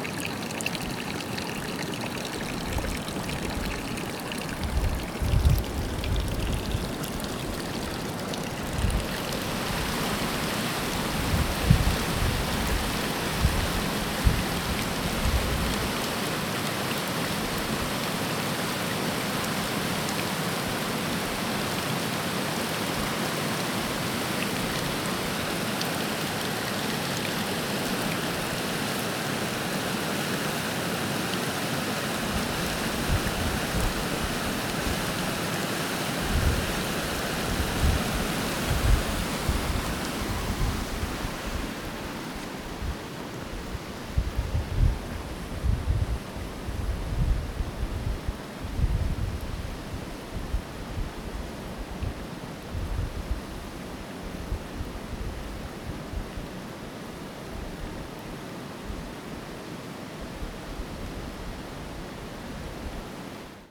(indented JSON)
{
  "title": "Refuge de Vens, France - Waterfall and trough next to Refuge de Vens (WLD 2014)",
  "date": "2014-07-18 19:45:00",
  "description": "Water trickling into a trough and the white noise of the waterfall next to it.\nRecorded on World Listening Day 2014\nZoom H1",
  "latitude": "44.32",
  "longitude": "6.94",
  "altitude": "2361",
  "timezone": "Europe/Paris"
}